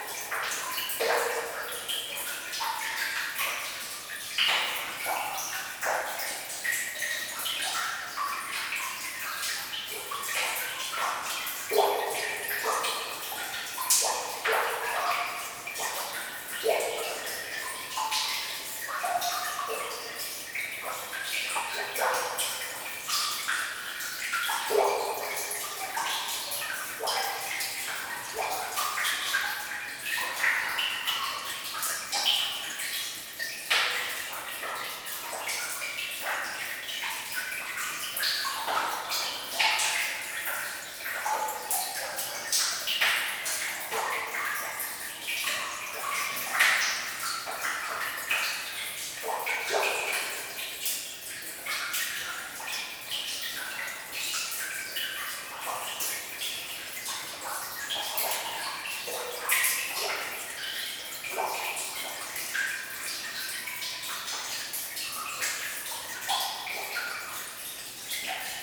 Short soundscape of an underground mine. Rain into the tunnel and reverb.